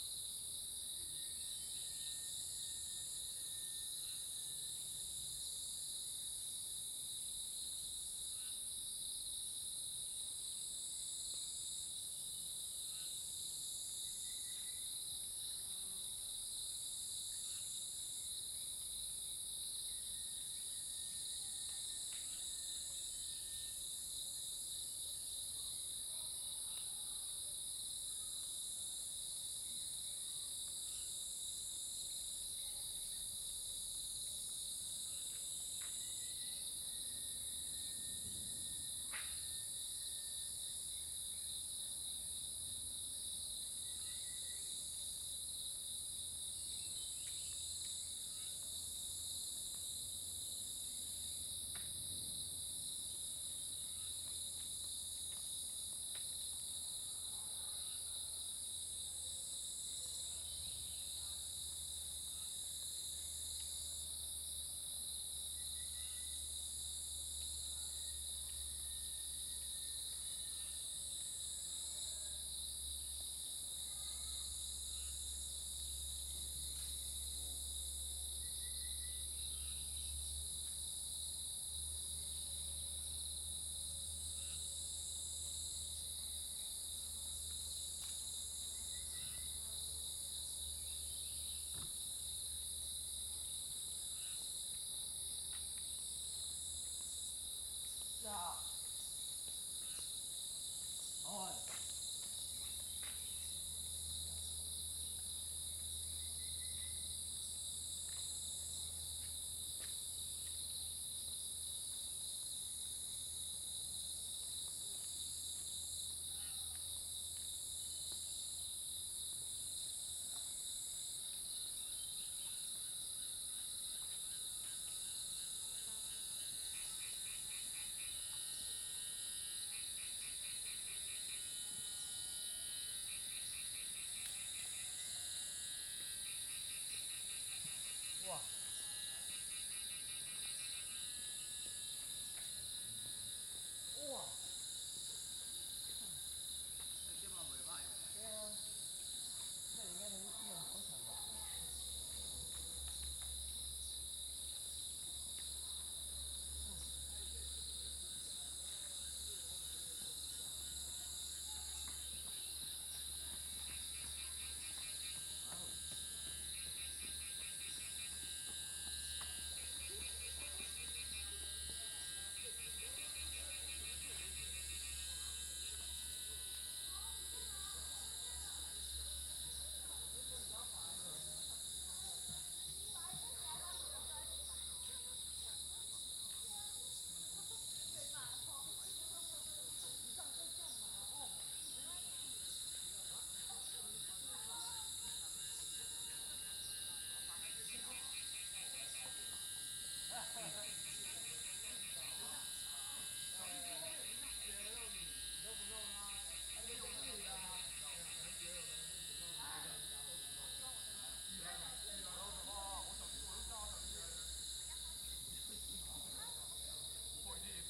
In the woods, Bird sounds, Cicada sounds
Zoom H2n MS+XY
18 May 2016, 05:47